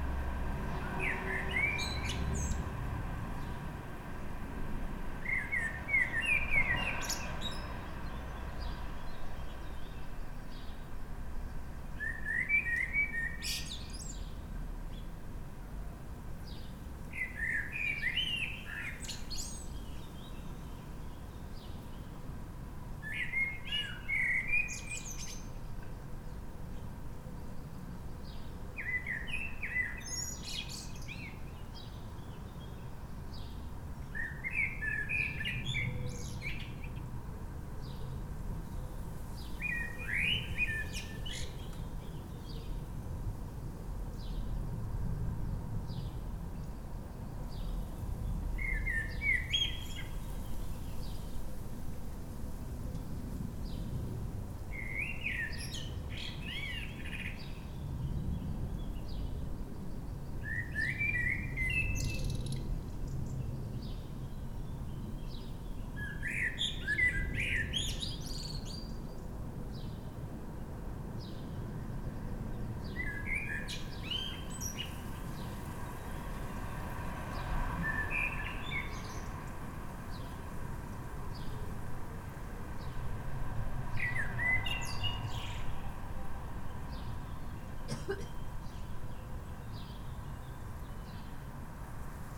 Katesgrove, Reading, UK - Blackbird singing first thing
A blackbird that has woken us up most mornings this spring with his beautiful early-morning song.
14 May 2016